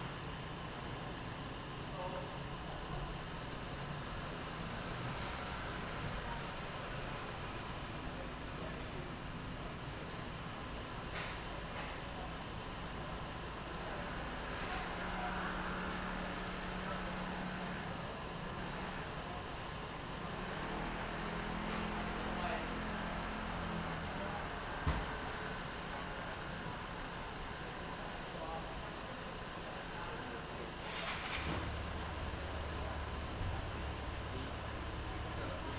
Taishan District, New Taipei City - Environmental sounds on the street
Environmental sounds on the street, Traffic Sound, Zoom H6
New Taipei City, Taiwan, 31 December 2013